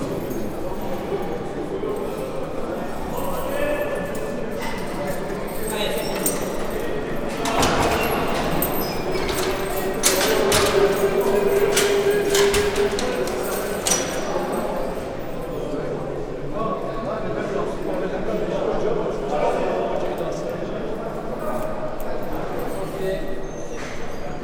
centre de détention de Loos